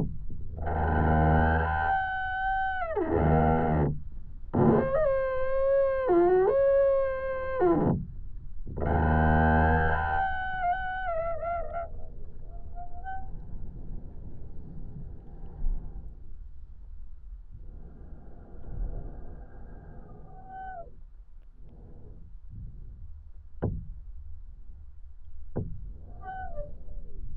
Utena, Lithuania, breathing singing tree
Windy evening. Amazingly singing, moaning tree. A pair contact microphones.